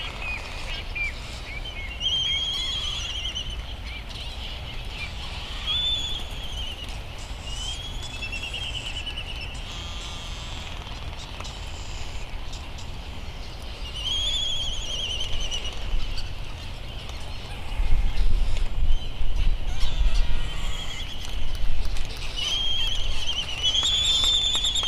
Laysan albatross soundscape ... Sand Island ... Midway Atoll ... laysan albatross calls and bill clapperings ... bonin petrel and white tern calls ... open lavalier mics ... warm with slight breeze ...